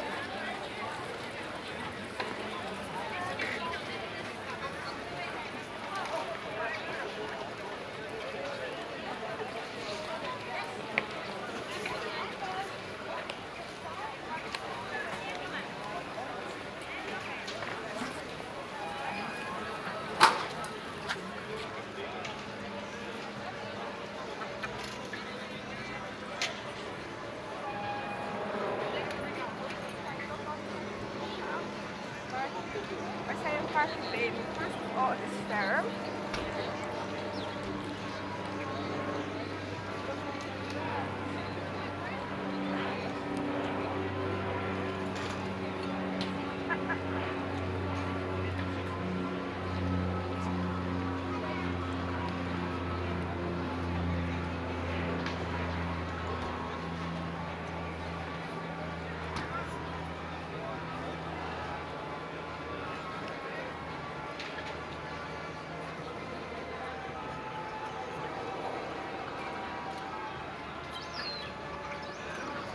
Westnieuwland, Rotterdam, Netherlands - Blaak market. Street preacher
I recorded this during the street market on Saturdays. I walked about 20 meters around Markthal
26 September 2020, 16:00